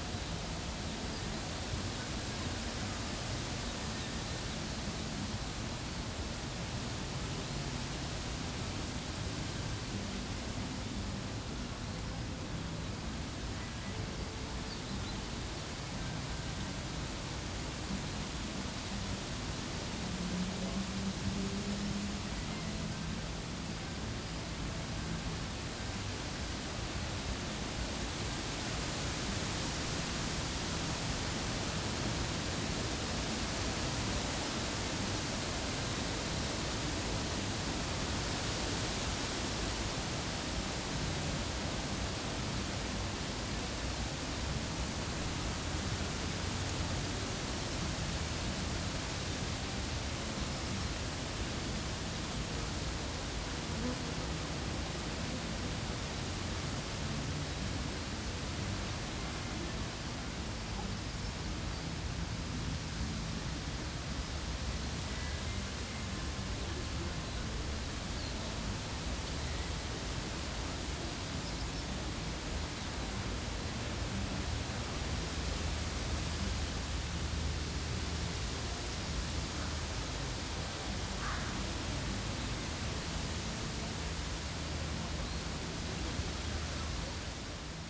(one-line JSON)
{"title": "Tempelhofer Feld, Berlin, Deutschland - wind in poplar trees", "date": "2012-06-17 11:15:00", "description": "a nice breeze in a group of poplar trees on Tempelhofer Feld.\n(tech: SD702 2xNT1a)", "latitude": "52.48", "longitude": "13.40", "altitude": "42", "timezone": "Europe/Berlin"}